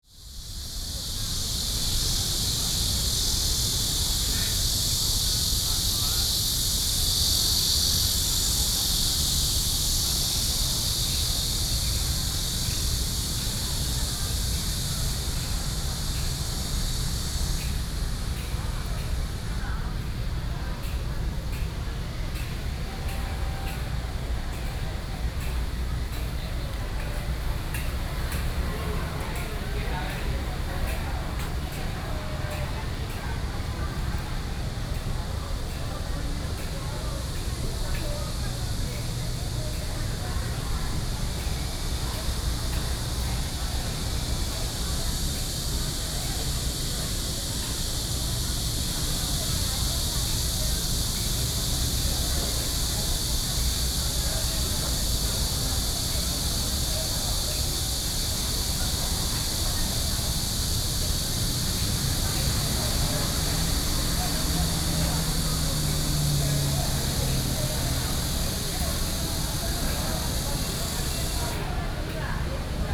in the Park, next to the traditional market, Traffic Sound, Cicadas cry
Binaural recordings, Sony PCM D50
New Taipei City, Taiwan, 20 June, 10:42